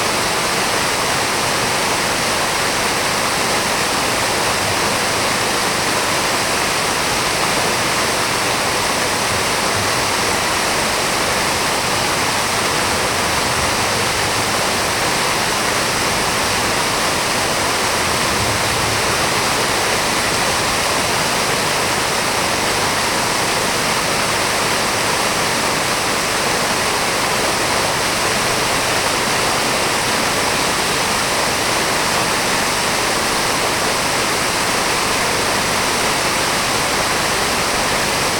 Orléans, fontaine Place du Martroi
Fontaine de droite à la place du Martroi, Orléans (45 - France)
Orléans, France